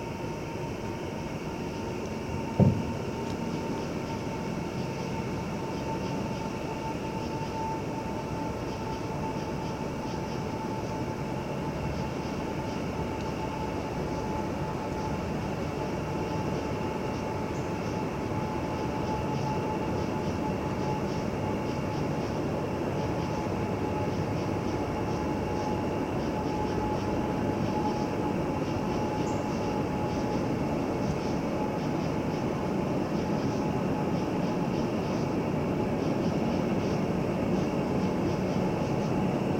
Ames, IA, USA - Sunday Evening on the Bridge
Sunday evening on the bridge by Brookside Park